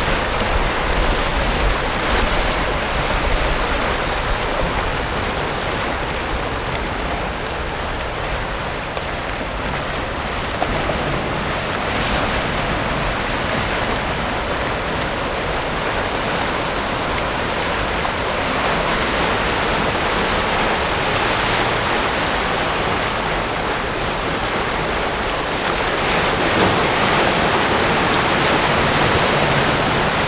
白沙灣 Sanzhi Dist., New Taipei City - The sound of the waves